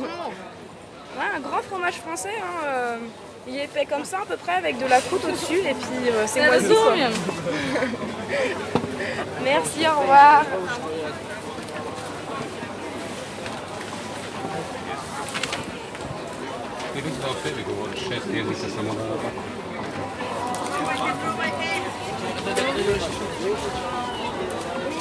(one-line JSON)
{"title": "Market Dolac: Lost in translation", "date": "2001-07-16 15:00:00", "description": "atmosphere of the market with tree dialogues between urban customers and farmers", "latitude": "45.81", "longitude": "15.98", "altitude": "136", "timezone": "Europe/Zagreb"}